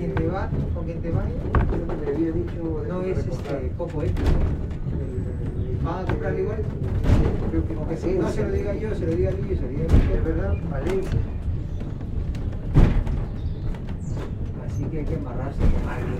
Del Faro Ibilbidea, San Sebastián, Gipuzkoa, Espagne - finiculare 02
finicular
Captation : ZOOMH6
Gipuzkoa, Euskadi, España, 28 May 2022, 17:30